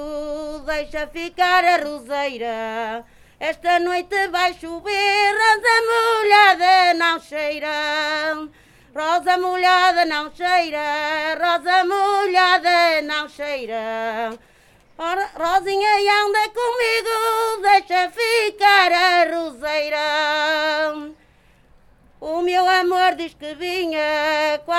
Viana do Castelo, Portugal - Rosinha da Dona Florinda
12 April, 11:00